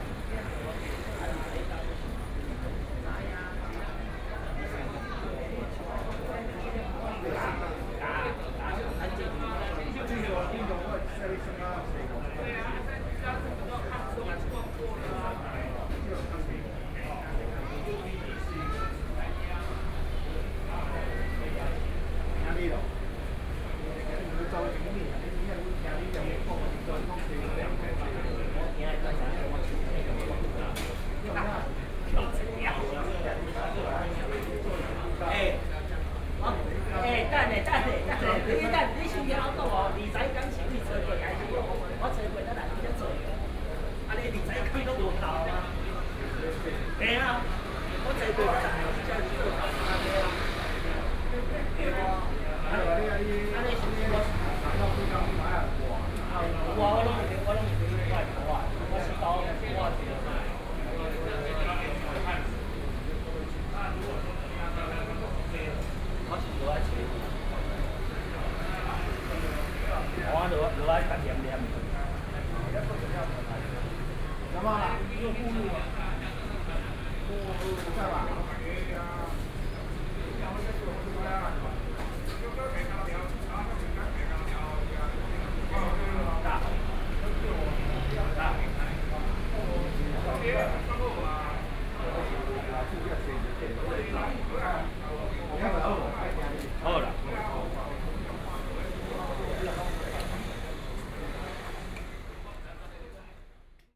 Traffic Sound, Noon break, Convenience Store, Workers break